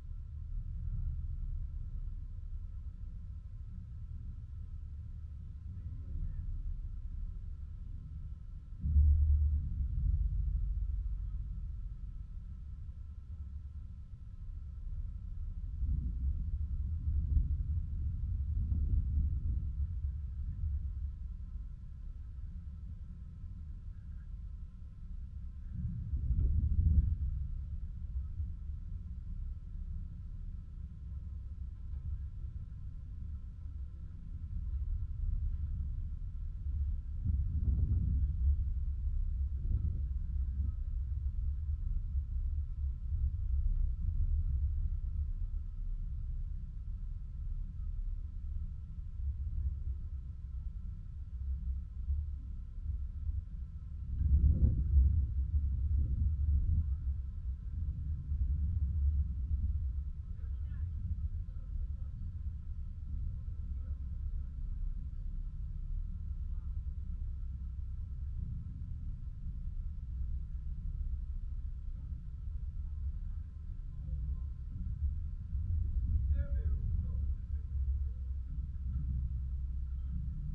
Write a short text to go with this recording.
contact microphone on a piece of armature found on the top of the mountain